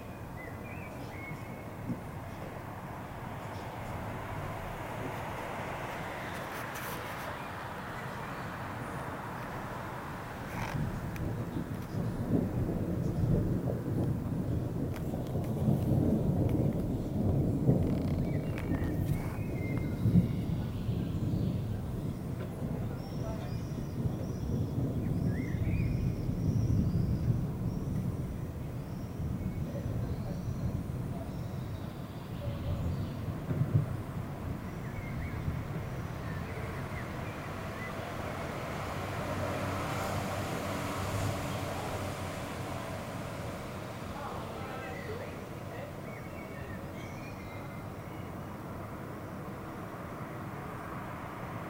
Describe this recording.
recorded june 22nd, 2008, around 10 p. m. project: "hasenbrot - a private sound diary"